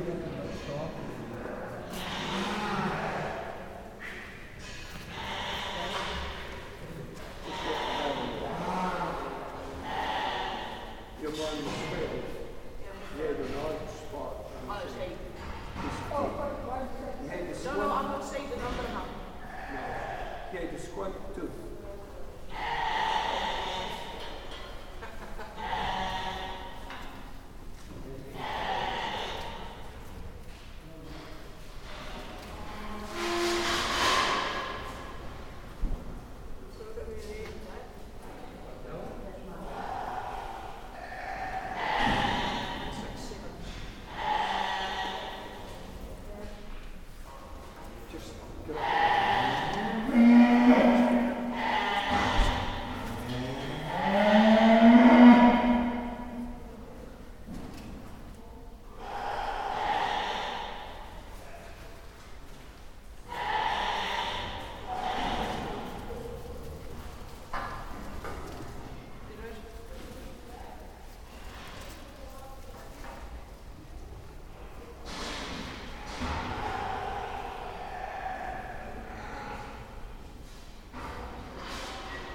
This is the sound of Oliver Henry, June Moulder and Janet Robertson of the Shetland Wool Brokers judging wool on the hoof at the Shetland Flock Book. This involves checking the fleeces of sheep in their pens and judging their quality. You can only hear them faintly in this recording - the other sounds are a cow that was also in the Auction Marts, the sounds of the metal gates clanging, and a ram that was baaing.
3 October 2015, 10:17